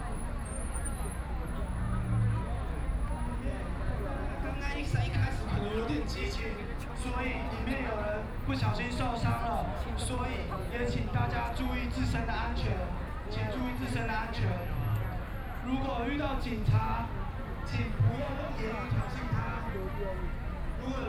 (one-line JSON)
{"title": "Zhongxiao E. Rd., Taipei City - Occupied Executive Yuan", "date": "2014-03-23 21:00:00", "description": "University students occupied the Executive Yuan\nBinaural recordings", "latitude": "25.05", "longitude": "121.52", "altitude": "10", "timezone": "Asia/Taipei"}